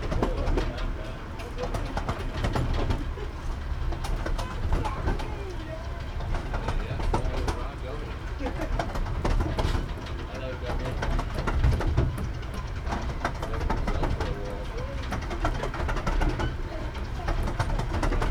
Scarborough - Scarborough North Bay Railway
Train ride from Scalby Mills Station to Peasholm Station ... lavalier mics clipped to baseball cap ...
Scarborough, UK, July 2016